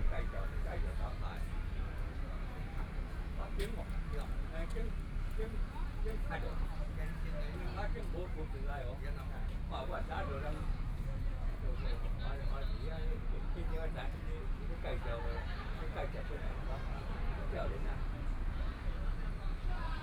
旗津區振興里, Kaoshiung City - Seaside Park
In the shade under a tree, Traffic Sound, Hot weather, Tourist
Kaohsiung City, Taiwan, 14 May 2014